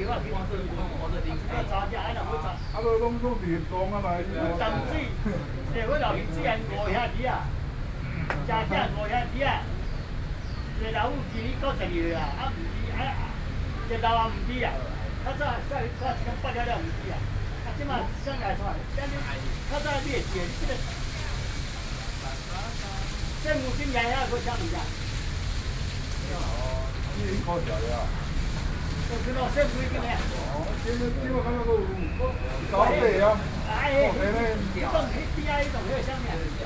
Beitou Park - The young and the elderly